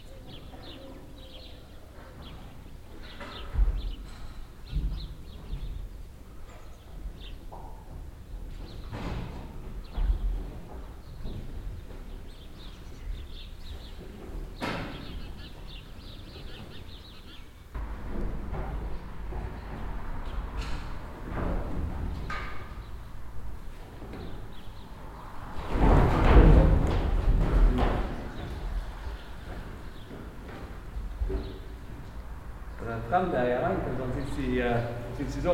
tandel, bull shed
Inside a bull shed. The calls of the bulls and their nervous movements. The voice of the farmer trying to calm them down.
Tandel, Rinderschuppen
In einem Rinderschuppen. Die Rufe von Rindern und ihre nervösen Bewegungen. Die Stimme des Bauerns, der sie zu beruhigen versucht.
Tandel, étable à boeufs
A l’intérieur d’une étable à bœufs. Les cris des bœufs et leurs mouvements de nervosité. La voix de l’éleveur tentant de les calmer.
9 August, 23:45